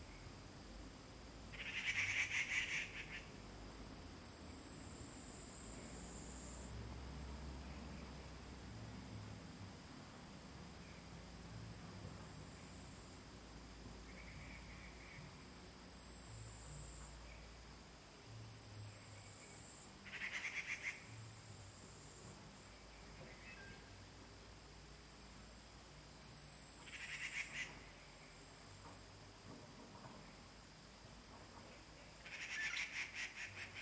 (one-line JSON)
{"title": "545台灣南投縣埔里鎮成功里種瓜路113號 - 藏機閣的第一聲", "date": "2015-09-16 00:49:00", "description": "Dendrocitta formosae, Birds singing in the ground.", "latitude": "23.95", "longitude": "120.89", "altitude": "548", "timezone": "Asia/Taipei"}